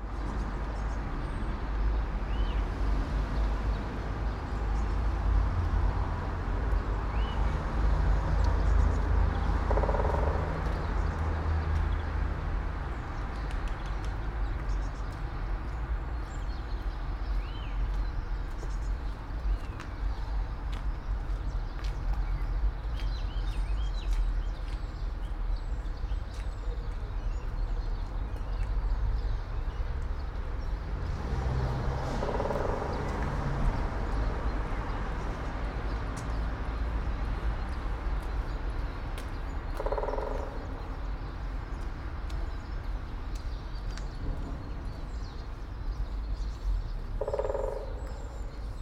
all the mornings of the ... - mar 9 2013 sat
Maribor, Slovenia, 9 March